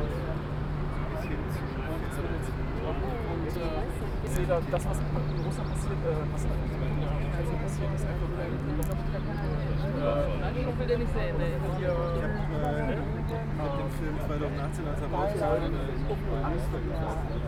Mahnwache gegen die geplante Erweiterung bei Westfleisch in Hamm-Uentrop.